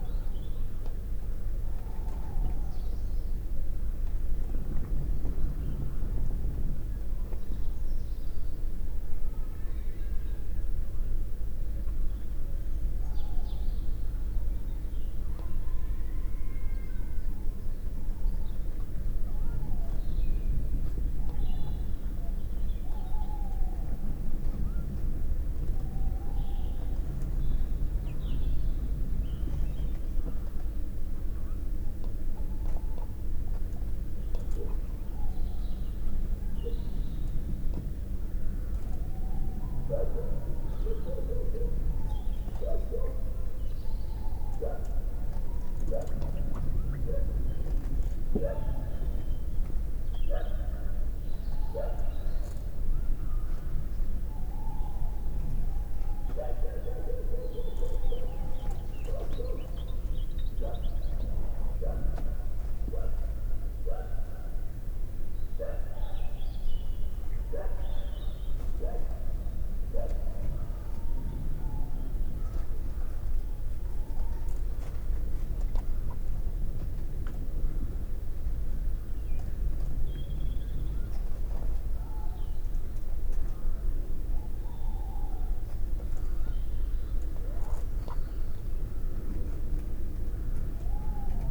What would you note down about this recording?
Natural, man-made and mysterious sounds from an overnight recording on the Malvern Hills. MixPre 3 with 2 x Sennheiser MKH 8020s